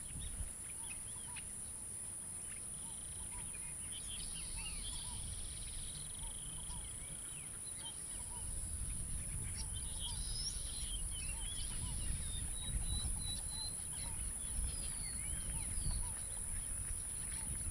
Angostura-San Sebastián, San Zenón, Magdalena, Colombia - Ciénaga San Zenón
Una angosta carretera de tierra en medio de la ciénaga de San Zenón, poblada por pájaros y otras especies aacuáticas.
April 2022